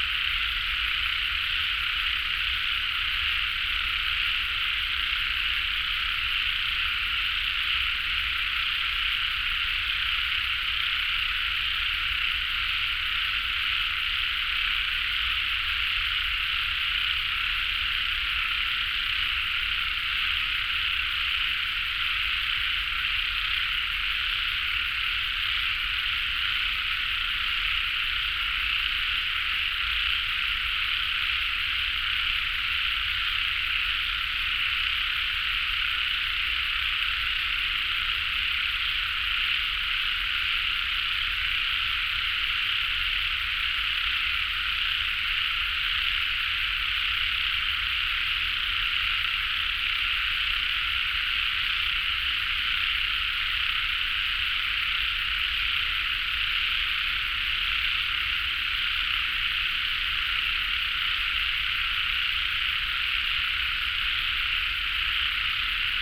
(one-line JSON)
{"title": "關渡里, Taipei City - Frogs sound", "date": "2014-03-18 19:22:00", "description": "Frogs sound, Traffic Sound, Environmental Noise\nBinaural recordings\nSony PCM D100+ Soundman OKM II + Zoom H6 MS", "latitude": "25.12", "longitude": "121.47", "timezone": "Asia/Taipei"}